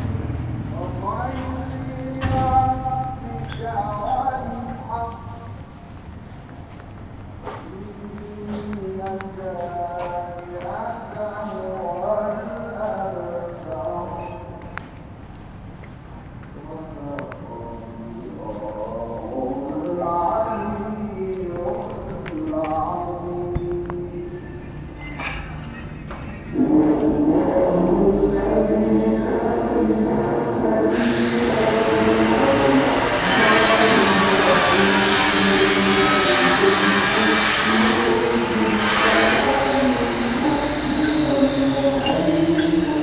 Tehran, Masjed, Iran - Singing of a Prayer
استان تهران, ایران